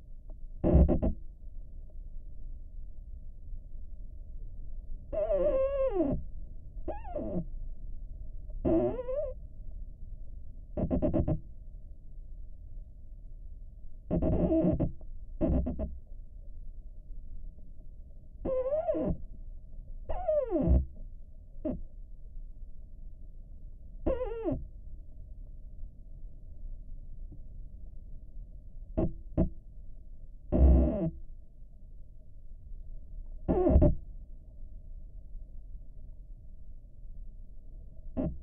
Grybeliai, Lithuania, singing tree(study)
singing tree in a wind. the first part is recorded with small omni mics, the second part with LOM geophone